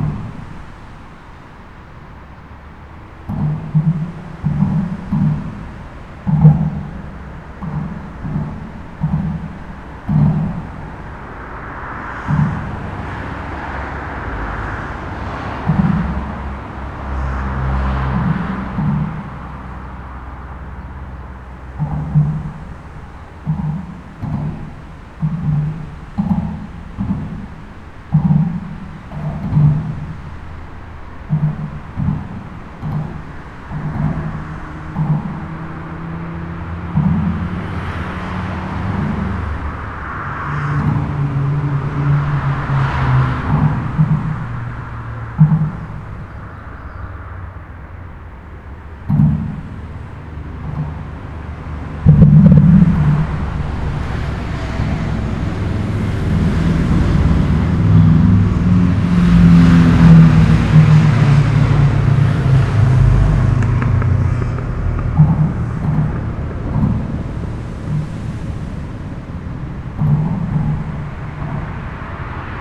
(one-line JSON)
{"title": "wermelskirchen, kreisstraße 3: unter autobahnbrücke - the city, the country & me: under a1 motorway bridge", "date": "2011-05-08 11:36:00", "description": "the city, the country & me: may 8, 2011", "latitude": "51.16", "longitude": "7.22", "altitude": "241", "timezone": "Europe/Berlin"}